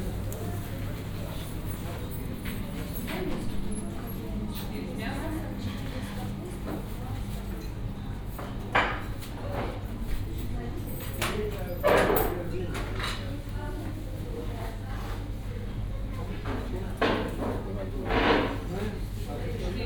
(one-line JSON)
{"title": "Tallinn, Balti jaam, cafe", "date": "2011-04-19 14:10:00", "description": "coffe break during the tuned city workshop.", "latitude": "59.44", "longitude": "24.74", "altitude": "19", "timezone": "Europe/Tallinn"}